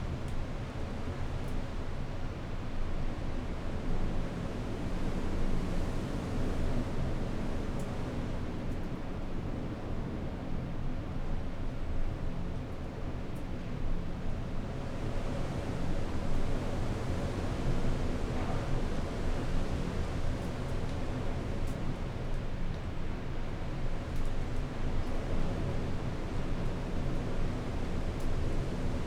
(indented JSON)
{
  "title": "Unnamed Road, Malton, UK - inside church porch ... outside storm erik ...",
  "date": "2019-02-09 07:50:00",
  "description": "inside church porch ... outside ... on the outskirts of storm erik ... open lavaliers on T bar on tripod ...",
  "latitude": "54.12",
  "longitude": "-0.54",
  "altitude": "84",
  "timezone": "Europe/London"
}